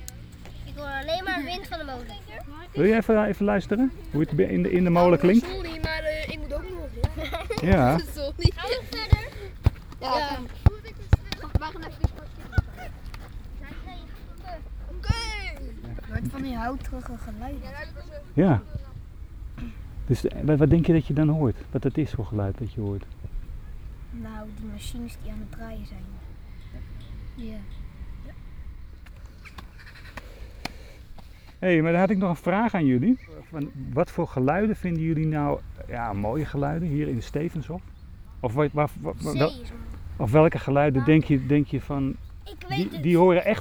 {
  "title": "reactie kinderen op voetbalveld",
  "date": "2011-09-03 17:15:00",
  "description": "geprek met kinderen over geluiden van de molen en in de Stevenshof en muziek....\ntalking with children on the soccerfield about sounds of the Stevenshof",
  "latitude": "52.15",
  "longitude": "4.45",
  "altitude": "1",
  "timezone": "Europe/Amsterdam"
}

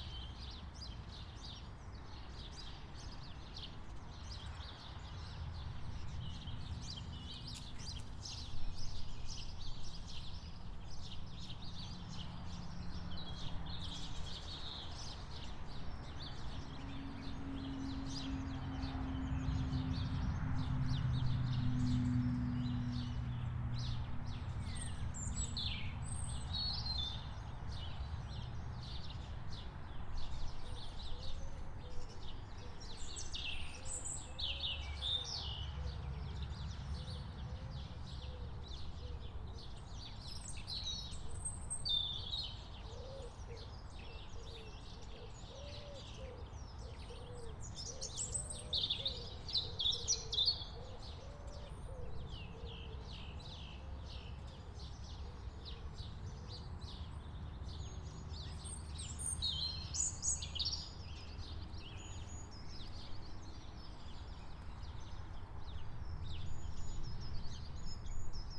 {
  "title": "Coulby Newham, Middlesbrough, UK - Recording of Local Area",
  "date": "2017-04-05 08:53:00",
  "description": "This is a recording of the area conducted over 15 minuets with a chnage of position every 5 this was done with a usb microphone",
  "latitude": "54.53",
  "longitude": "-1.22",
  "altitude": "48",
  "timezone": "Europe/London"
}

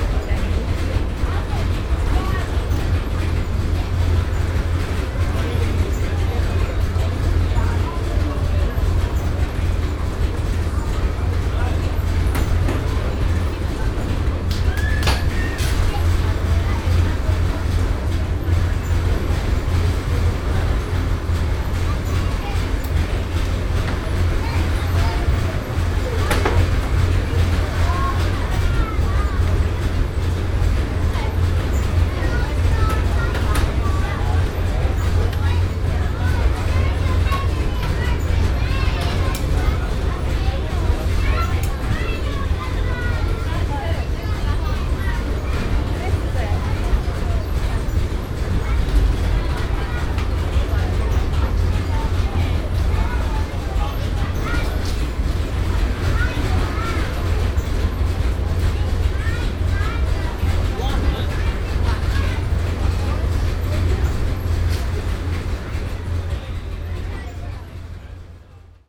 {
  "title": "La Rochelle. Laleu airport. Baggage reclaim ambience",
  "date": "2011-07-09 16:14:00",
  "description": "Luggage arriving on the baggage reclaim conveyor belt",
  "latitude": "46.18",
  "longitude": "-1.19",
  "timezone": "Europe/Paris"
}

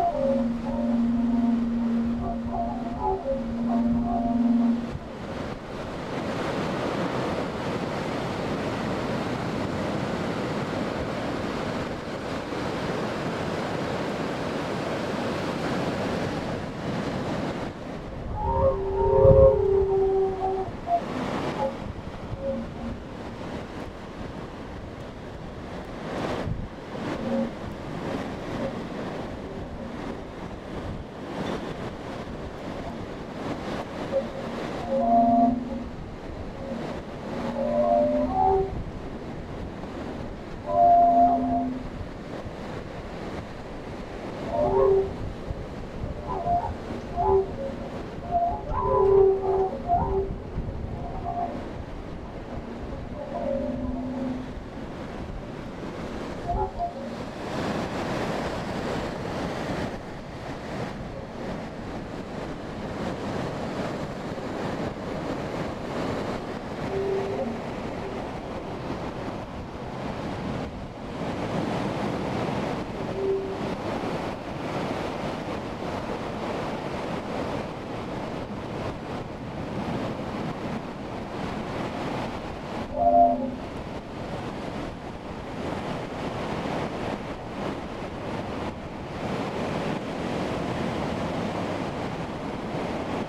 {
  "title": "Pharo, Marseille, France - found object/heolian harp/lo-fi",
  "date": "2014-01-05 17:38:00",
  "description": "a metal pipe\ntwo horizontals holes\na lot of wind",
  "latitude": "43.29",
  "longitude": "5.36",
  "altitude": "4",
  "timezone": "Europe/Paris"
}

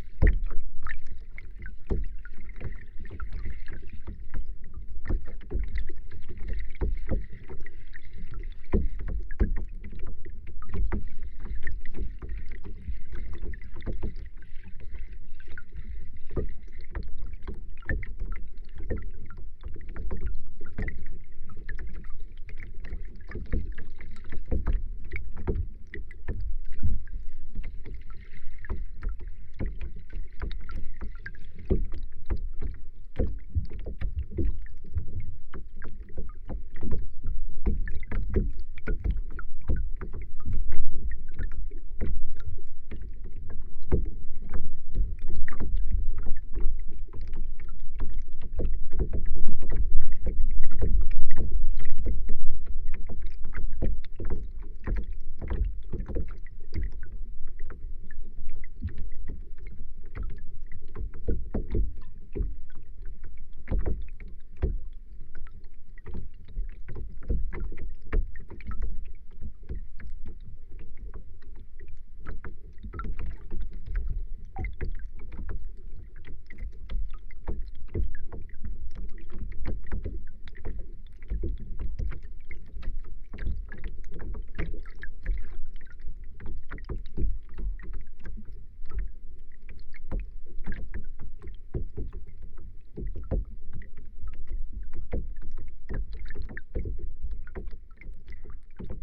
{
  "title": "Zarasai, Lithuania, bridge and under",
  "date": "2020-02-29 15:15:00",
  "description": "Hydrophone in the water under the bridge and LOM geophone on the bridge",
  "latitude": "55.73",
  "longitude": "26.24",
  "altitude": "130",
  "timezone": "Europe/Vilnius"
}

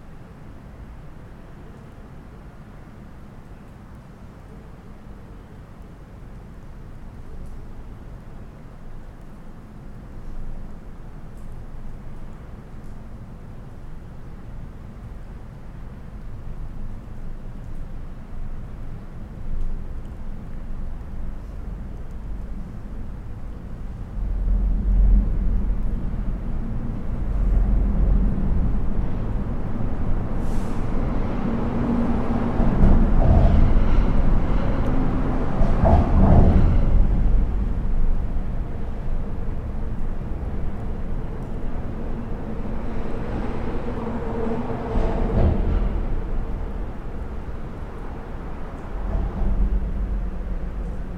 {
  "title": "under the bridge at night, Torun Poland",
  "date": "2011-04-05 23:48:00",
  "description": "sitting under the Torun bridge listening to the trucks passing above",
  "latitude": "53.01",
  "longitude": "18.60",
  "altitude": "33",
  "timezone": "Europe/Warsaw"
}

